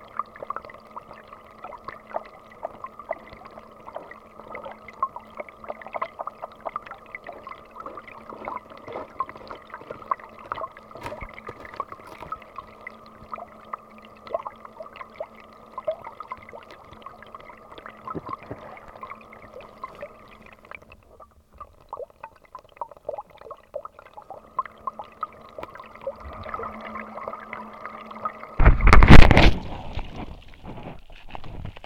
Filotas, Greece - Underwater
Recording with aquarian h2
Περιφέρεια Δυτικής Μακεδονίας, Αποκεντρωμένη Διοίκηση Ηπείρου - Δυτικής Μακεδονίας, Ελλάς, January 2022